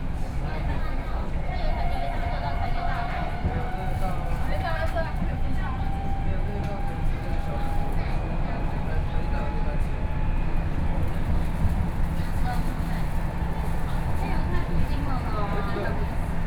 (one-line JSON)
{"title": "Tamsui, Taiwan - Tamsui Line (Taipei Metro)", "date": "2013-11-02 21:26:00", "description": "from Tamsui Station to Zhuwei Station, Binaural recordings, Sony PCM D50 + Soundman OKM II", "latitude": "25.16", "longitude": "121.45", "altitude": "12", "timezone": "Asia/Taipei"}